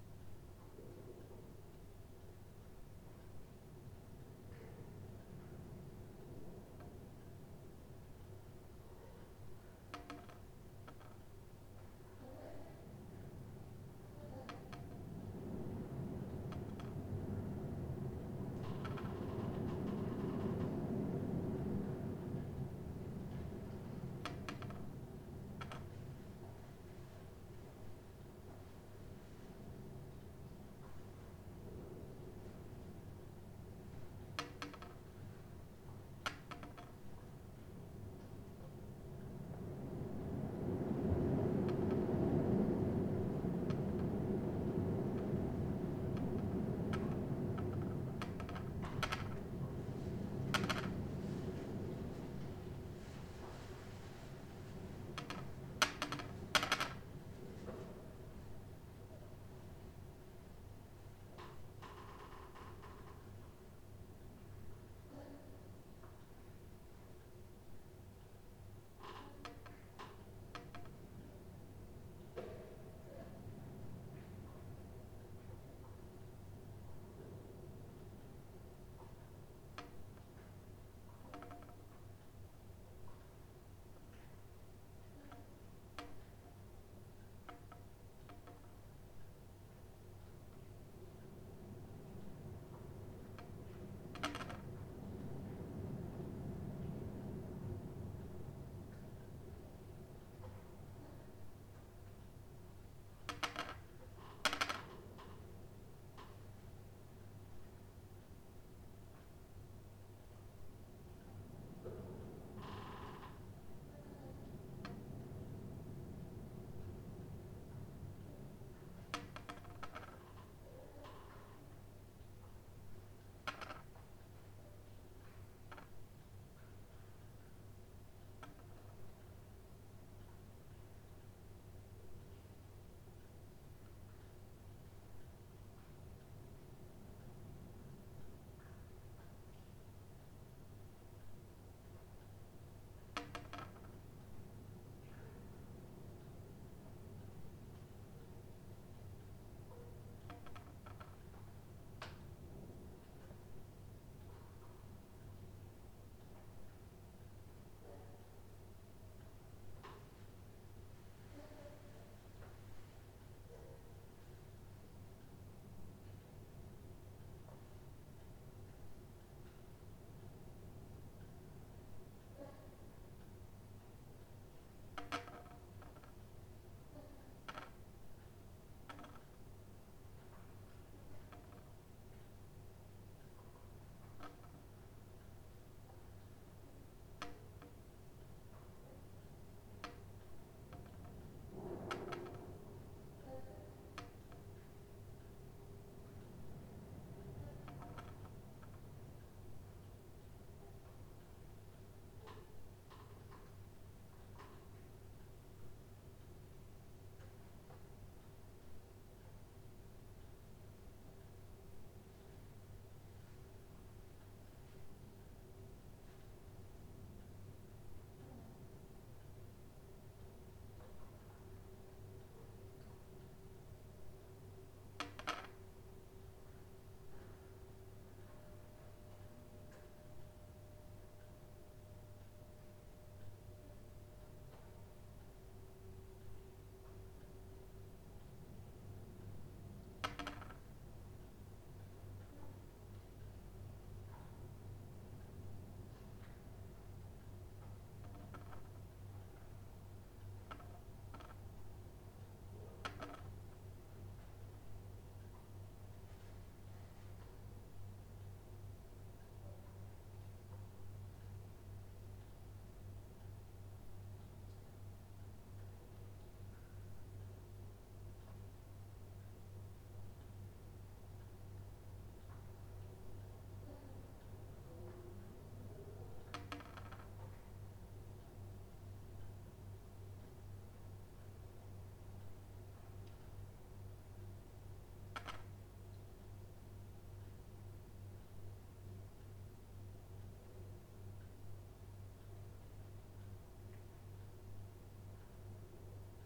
stormy christmas night, metal batten of a roller blind knocking against the wall, someone moaning, rain drops and a creaky door
the city, the country & me: december 26, 2009
Berlin, Germany, 26 December 2009